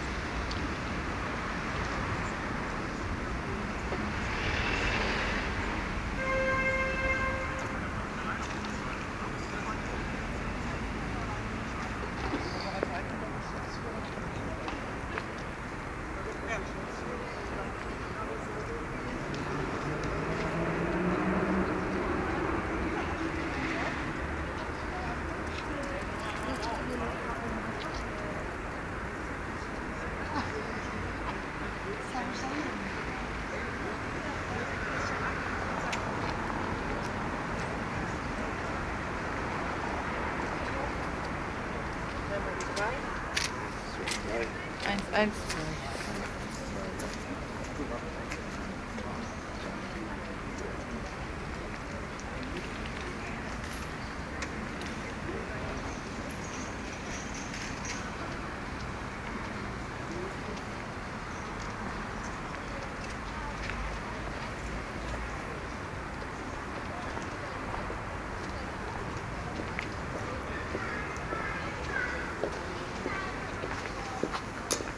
Schönleinstraße, Berlin, Deutschland - Soundwalk Schönleinstrasse
Soundwalk: Along Schönleinstrasse until Urbanstrasse
Friday afternoon, sunny (0° - 3° degree)
Entlang der Schönleinstrasse bis Urbanstrasse
Freitag Nachmittag, sonnig (0° - 3° Grad)
Recorder / Aufnahmegerät: Zoom H2n
Mikrophones: Soundman OKM II Klassik solo